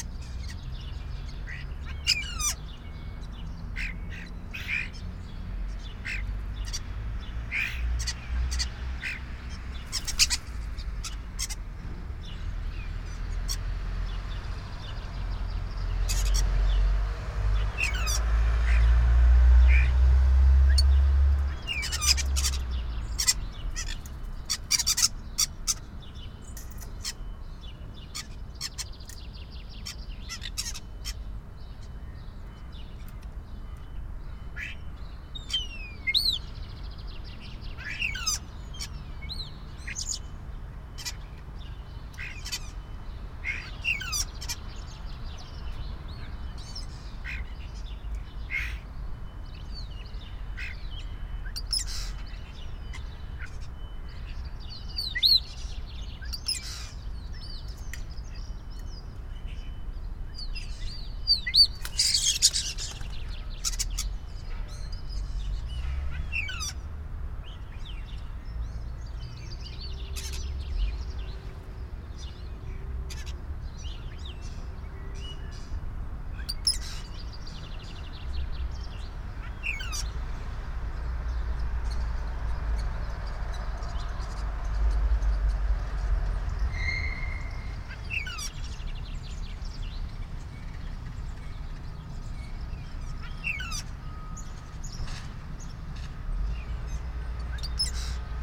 Early Morning Recording / Birds on a Rooftop - Garden President Brussels Hotel
Brussels North
Urban Sonic Environment Pandemic
Région de Bruxelles-Capitale - Brussels Hoofdstedelijk Gewest, België - Belgique - Belgien, 24 March 2020